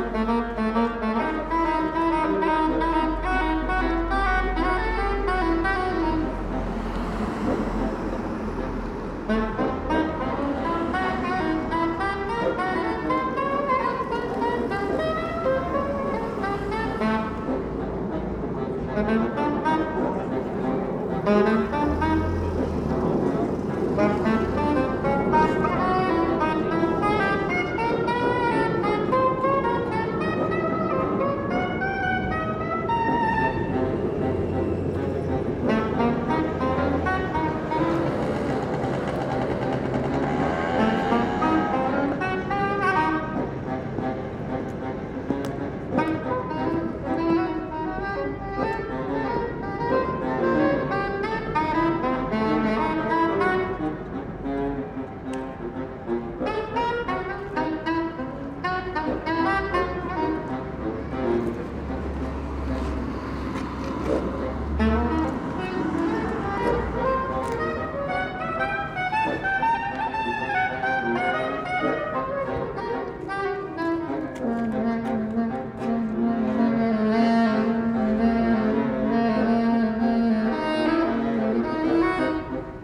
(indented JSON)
{
  "title": "Stargarder Str., Berlin, Germany - 2 Saxophonists entertain the street from above",
  "date": "2020-03-28 19:03:00",
  "description": "I just happened to be cycling past when 2 saxophonists started playing from high windows on opposite sides of the street and people stop to listen. So a hurried recording to capture the moment. Traffic still passes and there's a rare plane. My area has had none of the mass applause for health workers or coordinated bell ringing describe from elsewhere. But spontaneous individual sonic acts definitely fit with the Berlin character and this is one of them. I really like that until the applause happens in the recording you have no idea other people are there. The clapping reverberating from the walls reveals not only them but the size and dimensions of the street.",
  "latitude": "52.55",
  "longitude": "13.42",
  "altitude": "59",
  "timezone": "Europe/Berlin"
}